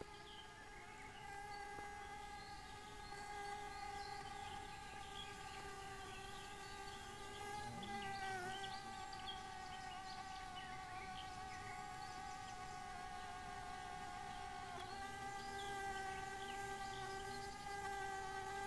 Duburys Island., Lithuania, wild bees